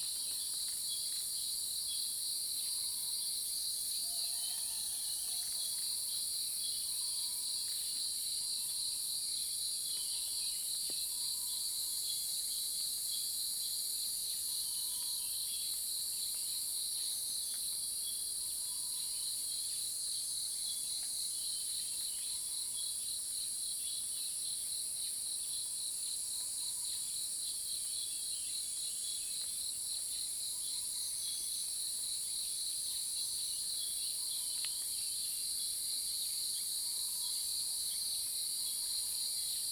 草湳溼地, 埔里鎮桃米里, Taiwan - Sound of insects
early morning, Sound of insects, birds sound
Zoom H2n MS+XY
Nantou County, Taiwan, July 2016